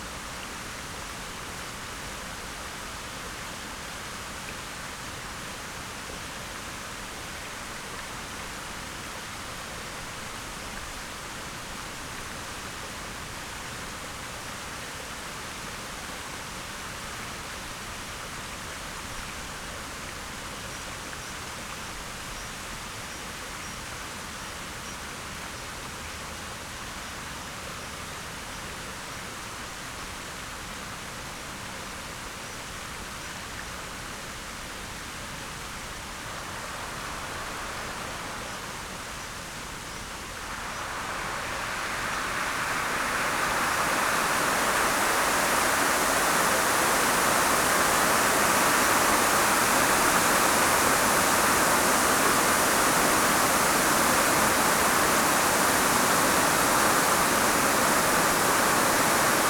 Alnwick, UK - Grand Cascade ...
Alnwick Gardens ... Grand Cascade ... lavalier mics clipped to baseball cap ...